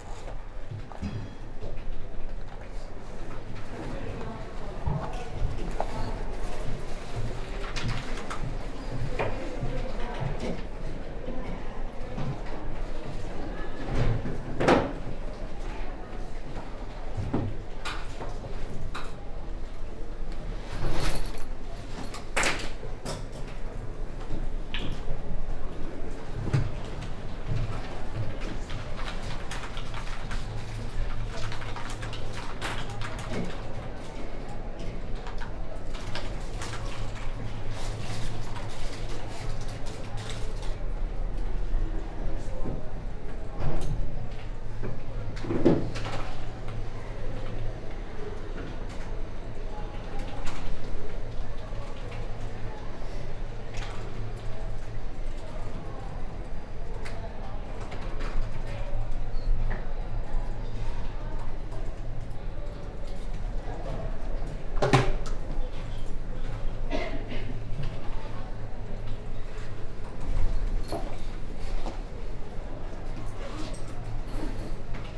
Oxford Brookes University, Gypsy Lane, Oxford - Brookes Library Study Space
Short 10-minute meditation in the study area of Brookes library. (Spaced pair of Sennheiser 8020s with SD MixPre6)